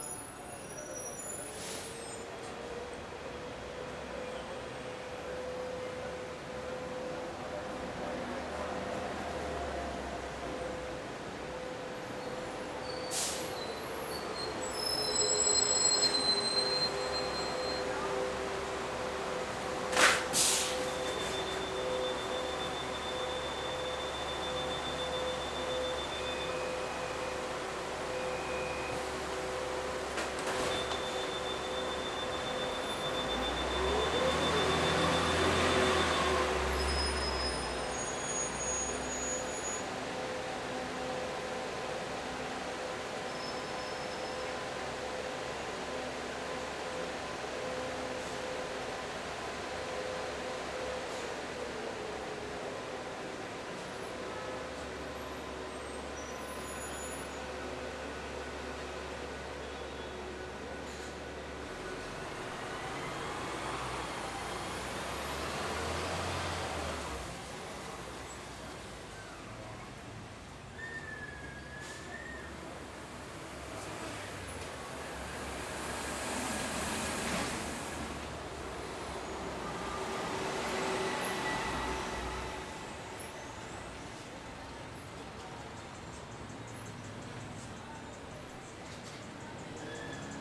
Los Alpes Cll. 30 entre Cra.82C y, Belén, Medellín, Antioquia, Colombia - MetroPlus, estacion Los Alpes.
Es un paisaje muy contaminado auditivamente, donde hace alarde el constante bullicio humano y la maquinaria destinada para el transporte. Lo cual opaca casi totalmente la presencia de lo natural y se yuxtapone el constante contaminante transitar humano.